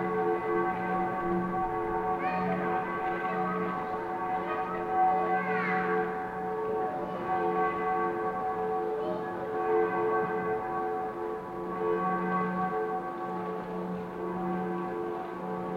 Cathedral, Przemyśl, Poland - (71 BI) Christmas bells
Bells on the evening of first Christmas day.
Recorded with Soundman OKM on Sony PCM D-100
województwo podkarpackie, Polska, 25 December 2016